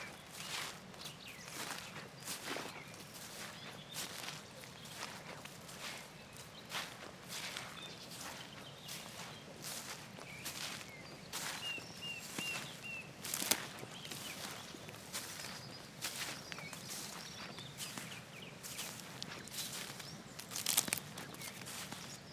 On a small farm in Wales. Using handheld Lom microphones on a stereo bar.
Cymru / Wales, United Kingdom, 19 February